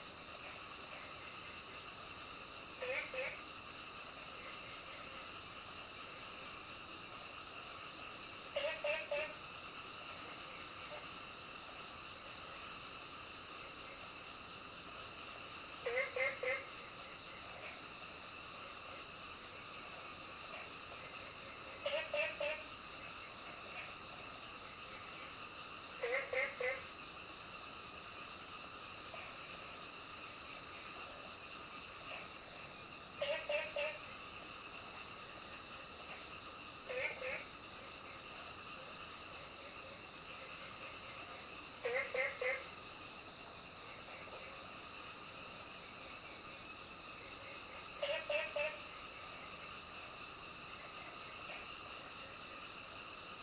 綠屋民宿, 桃米里 Nantou County - Early morning
Crowing sounds, Bird calls, Frogs chirping, Early morning
Zoom H2n MS+XY
Nantou County, Taiwan